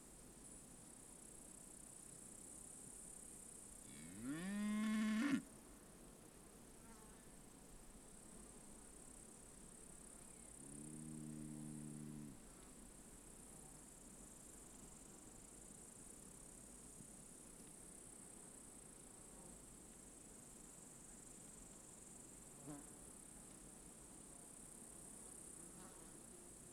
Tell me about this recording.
a soundscape near the Siaudiniai mound